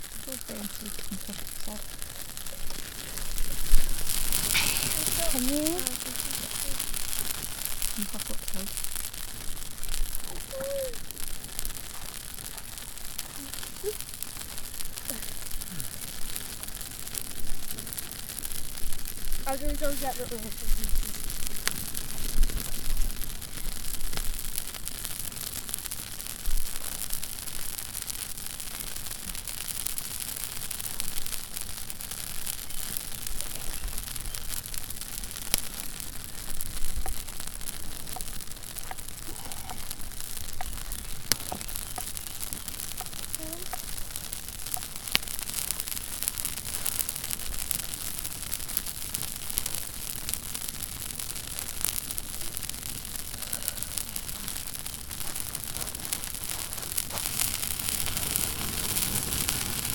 After a swim in the pond there's nothing like a sizzling campfire.
Connacht, Republic of Ireland, 9 June 2013